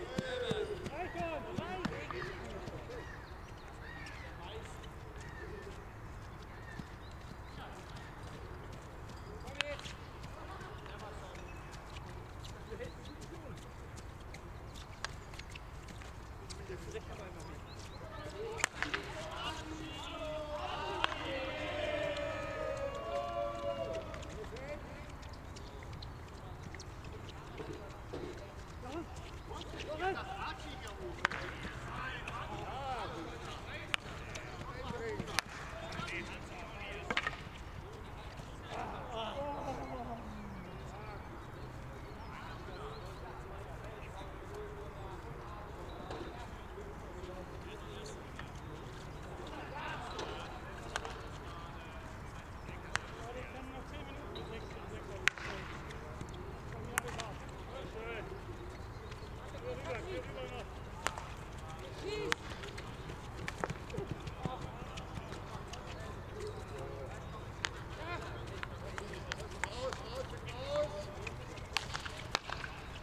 Ravensberg, Kiel, Deutschland - Field hockey training
Field hockey training (parents team) for fun in the evening
Zoom F4 recorder, Zoom XYH-6 X/Y capsule, windscreen